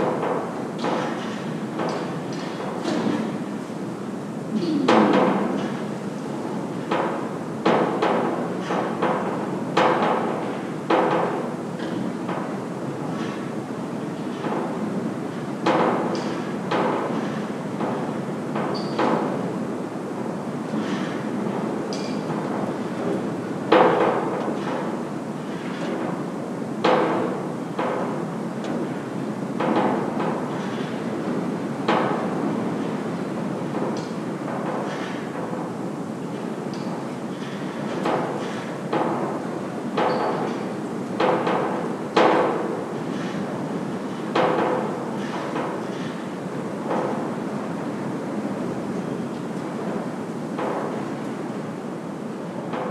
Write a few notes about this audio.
Atomic Weapons Research Establishment derelict building (LAB 1). DPA 4060 pair (30cm spacing) / SoundDevices 702.